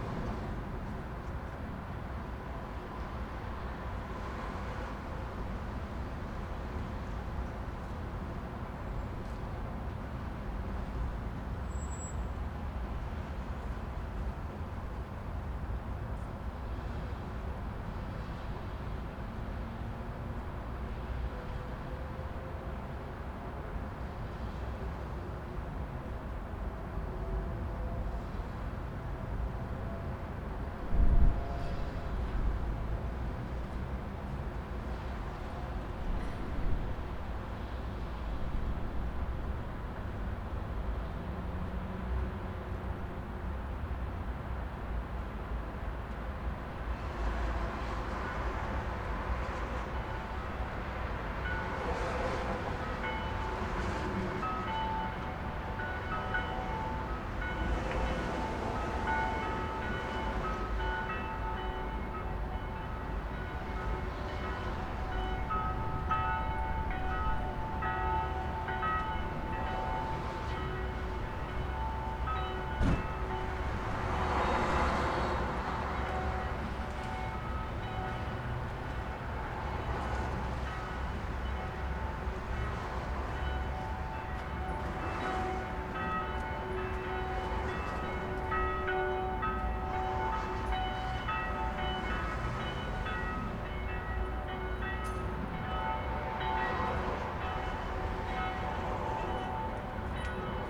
In the middle church bell ringing.